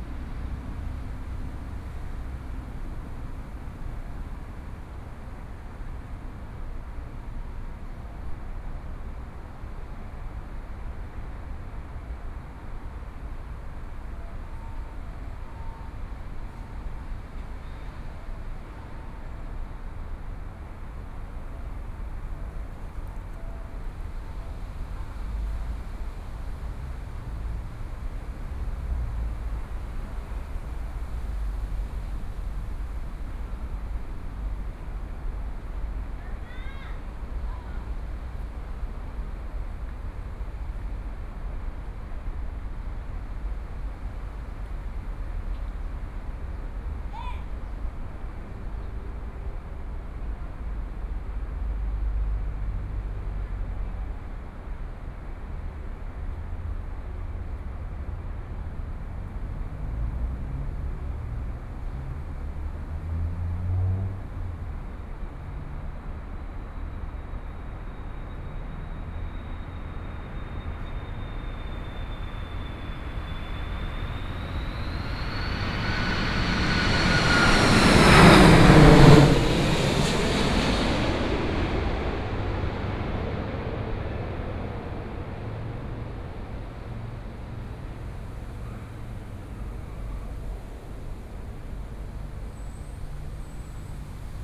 中山區圓山里, Taipei City - in the Park
Birds singing, Traffic Sound, Aircraft flying through